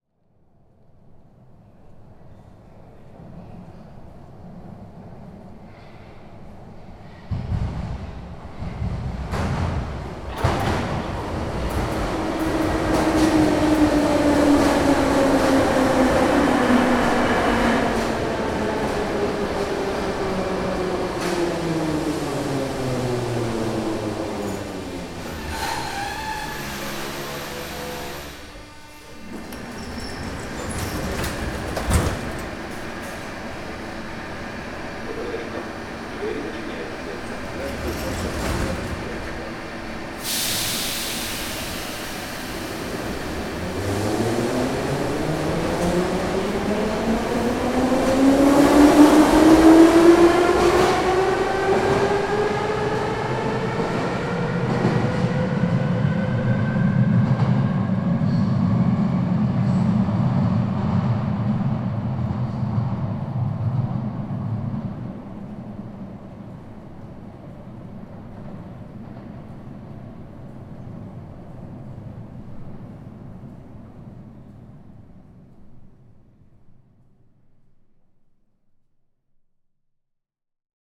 Klovska Metro Station, Kiev, Ukraine
At 107 meters, the deepest underground station in Europe is found in Kiev. However Klovska Station, featured here, is only about 80 meters deep.
Schoeps CCM4Lg & CCM8Lg M/S in modified Rode blimp directly into a Sound Devices 702 recorder.
Edited in Wave Editor on Mac OSx 10.5
13 September 2009